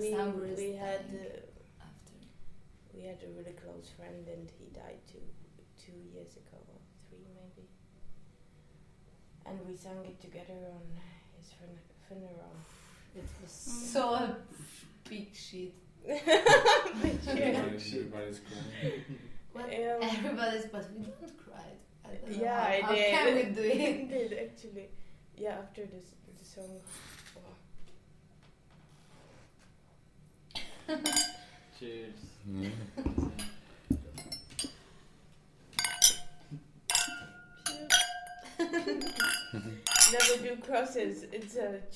Smíchov, Kimenék én ajtóm elejébe
Hungarian folk song, which Zsuzsanna and Livia were singing in one of the smichov’s apartments. About love, dead and birds at liberty.